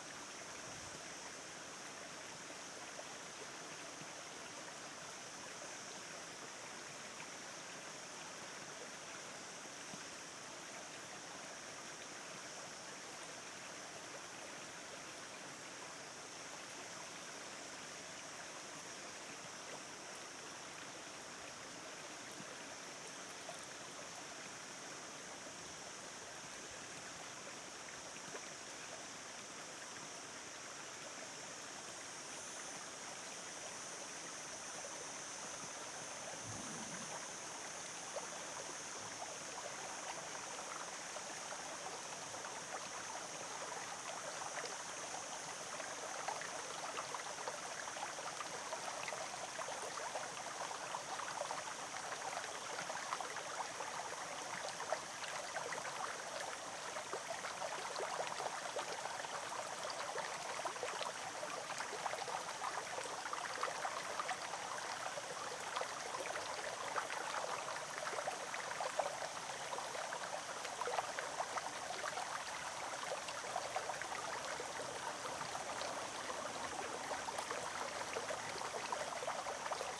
MVJQ+FH Bolulla, Espagne - Bolulla - Espagne Divers mix ambiance du jour
Bolulla - Province d'Alicante - Espagne
Divers mix ambiance du jour
ZOOM F3 + AKG 451B
15 July 2022, ~18:00